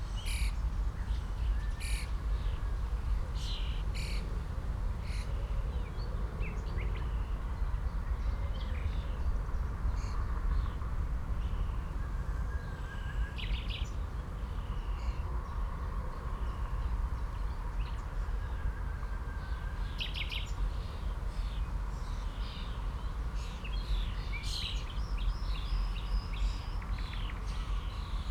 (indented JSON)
{
  "title": "Wiesenpark, Marzahn, Berlin - ambience near BVG depot, wind and birds",
  "date": "2015-05-23 18:00:00",
  "latitude": "52.55",
  "longitude": "13.58",
  "altitude": "49",
  "timezone": "Europe/Berlin"
}